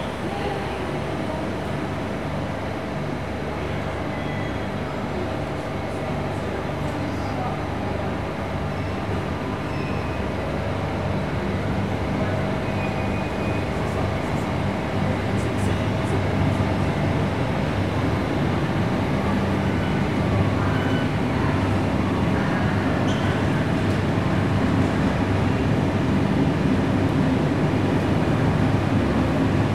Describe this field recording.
train station Narbonne, Captation : Zoomh4n